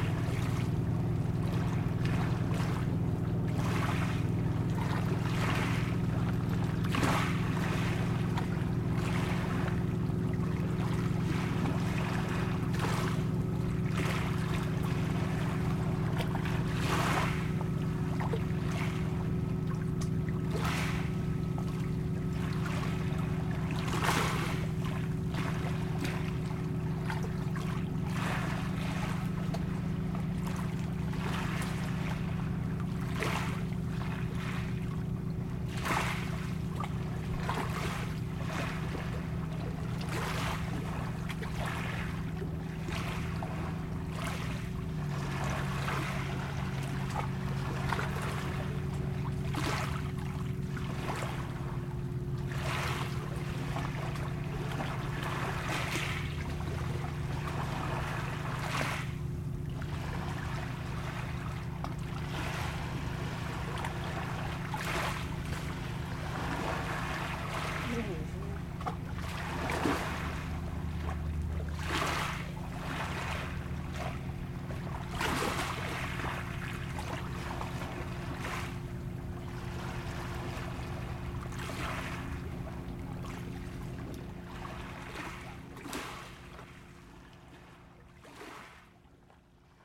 Embouchure du Sierroz, Aix-les-Bains, France - Vagues
Sur les berges du lac dans les rochers, vagues et passages de bateaux sur le lac du Bourget.